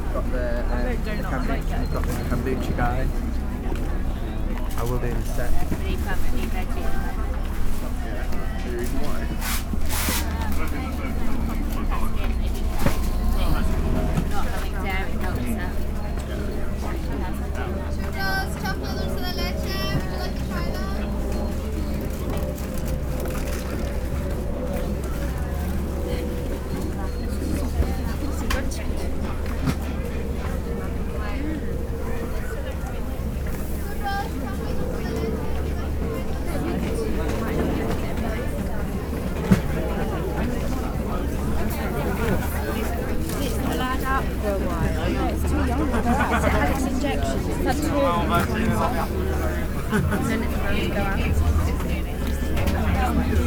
The crowd at an ethnic food market on a sunny Sunday.
MixPre 6 II with 2 x Sennheiser MKH 8020s in a rucksack.
Greater London, England, United Kingdom, March 2020